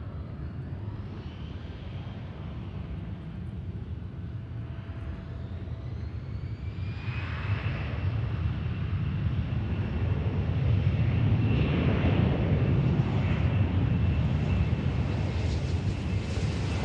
The sounds of the dog park next to Minneapolis/Paul International Airport. This is a great spot to watch planes when aircraft are landing on runway 12R. In this recording aircraft can be heard landing and taking off on Runway 12R and 12L and taking off on Runway 17. Some people and dogs can also be heard going by on the path.